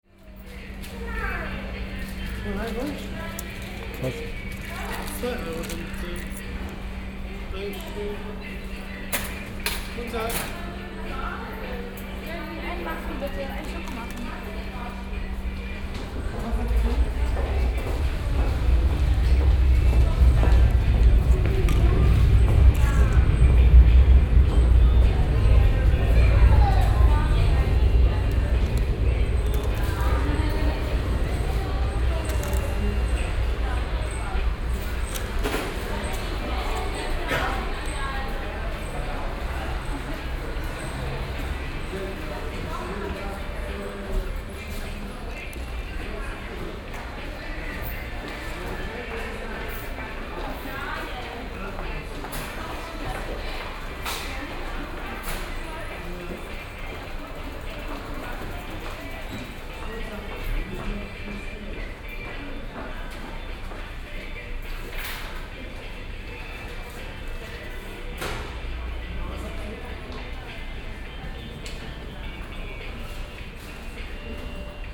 10.09.2008 16:20
S + U Bahn Innsbrucker Platz, entry area, 1 coffee + muffin, noisy radio at the coffee stand.
10 September, ~16:00